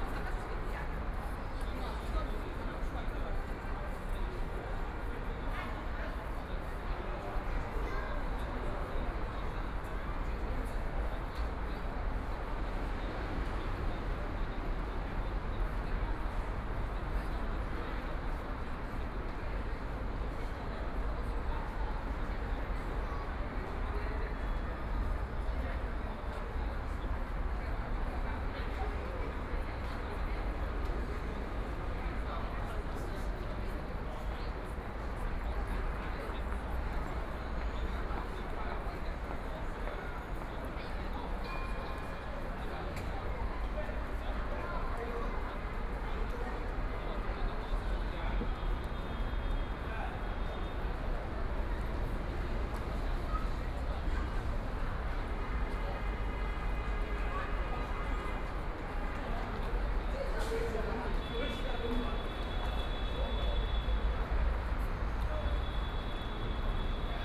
Wujiaochang, Yangpu District - The crowd
Sitting square edge, Traffic Sound, Binaural recording, Zoom H6+ Soundman OKM II